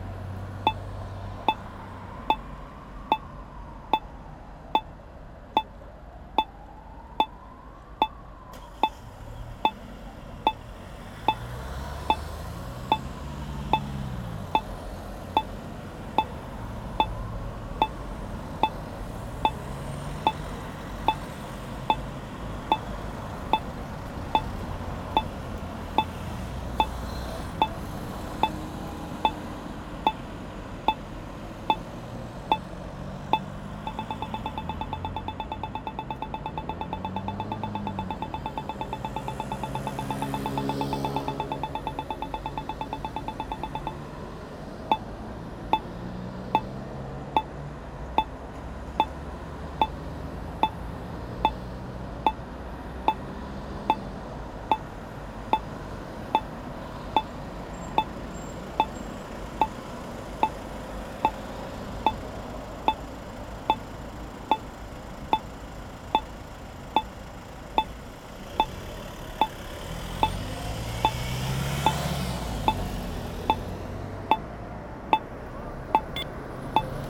Every evening Namur is very busy, there's traffic jam. On this busy bridge over the Meuse river, recording of a red light allowing people to cross the street.
Namur, Belgium